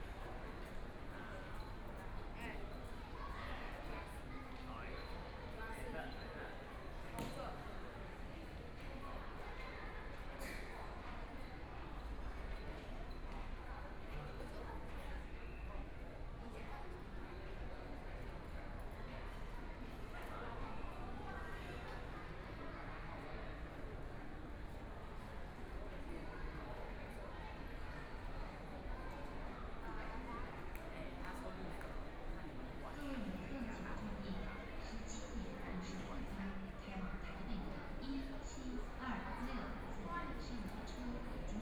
ChiayiStation, THSR - In the station lobby
In the station lobby, Binaural recordings, Zoom H4n+ Soundman OKM II